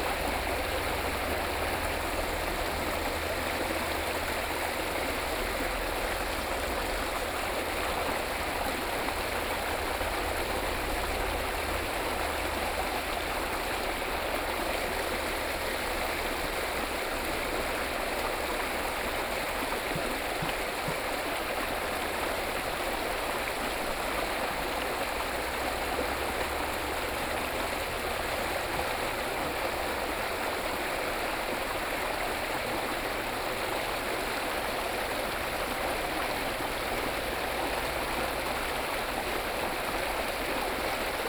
七腳川溪, Ji'an Township - Stream
Stream, Hot weather
Binaural recordings
Hualien County, Taiwan, 28 August 2014